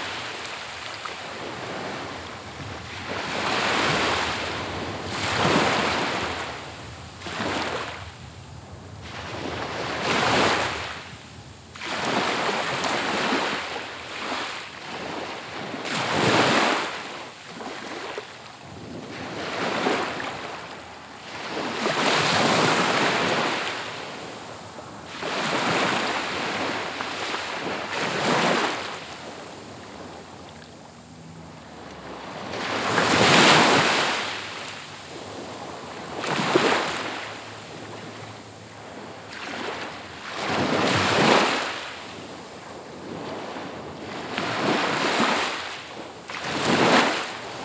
S W Coast Path, Swanage, UK - Swanage Beach Walking Meditation
A walking meditation along the seashore, back and forth between the groynes on this stretch of Swanage beach. Recorded on a Tascam DR-05 using the on-board coincident pair of microphones.